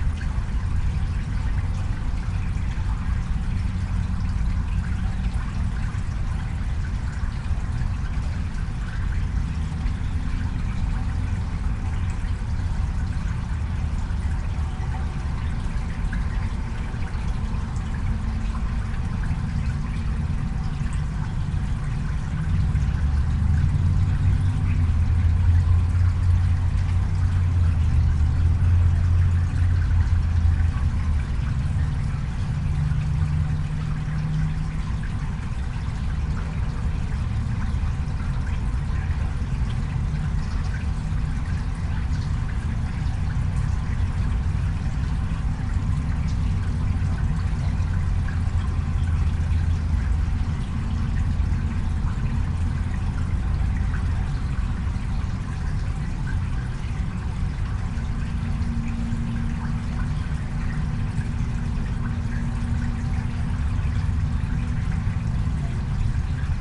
Oakwood Cemetery, Austin, TX, USA - Cemetery Irrigation Duct 2
Recorded with a pair of DPA 4060s and a Marantz PMD661
1 November 2015